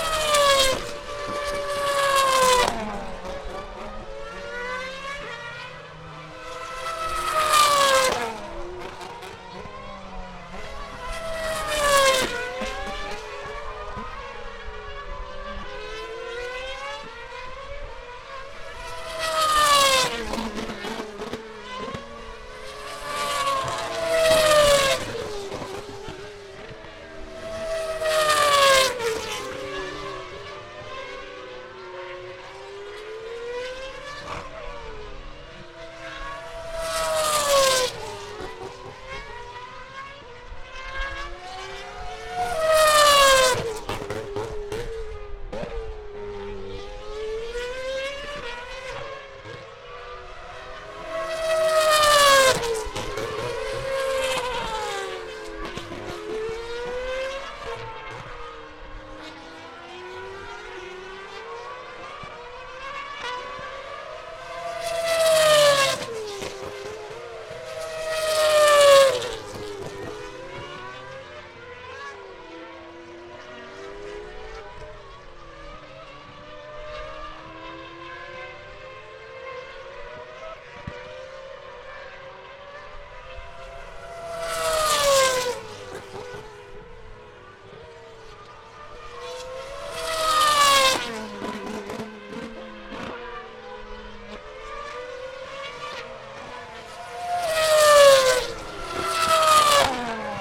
Silverstone, UK - F1 Cars at corner
F1 Cars at screeching past a corner at Silverstone.
Recorded using a Zoom H4N
Towcester, UK, 8 July 2012